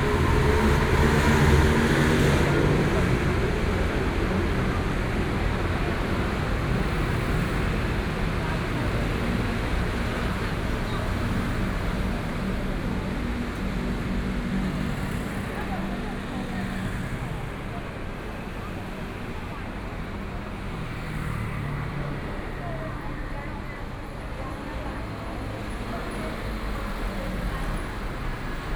內湖區紫陽里, Taipei City - walking on the Road
walking on the Road, Traffic Sound, Distance came the sound of fireworks
Please turn up the volume a little. Binaural recordings, Sony PCM D100+ Soundman OKM II
Neihu District, Taipei City, Taiwan, 12 April 2014, 21:35